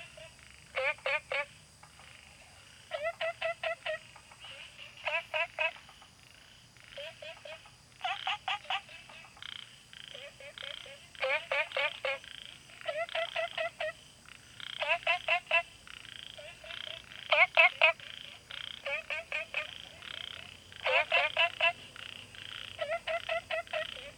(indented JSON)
{
  "title": "Taomi Ln., Puli Township - Frogs chirping",
  "date": "2015-08-11 21:09:00",
  "description": "Frogs chirping, Insects sounds\nZoom H2n MS+ XY",
  "latitude": "23.94",
  "longitude": "120.94",
  "altitude": "463",
  "timezone": "Asia/Taipei"
}